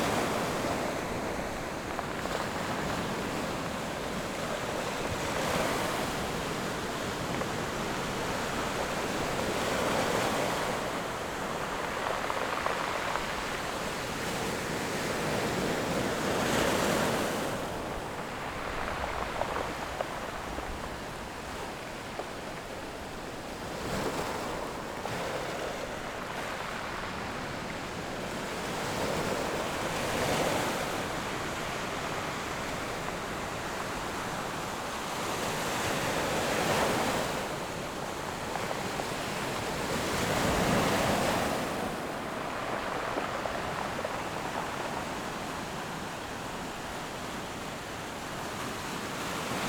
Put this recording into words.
Sound of the waves, Very hot weather, Zoom H6 MS+ Rode NT4